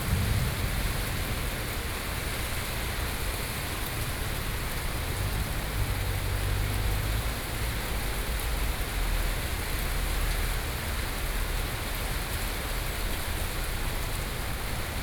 Zhongzheng District, Taipei - Thunderstorm
Traffic Noise, Thunderstorm, Sony PCM D50, Binaural recordings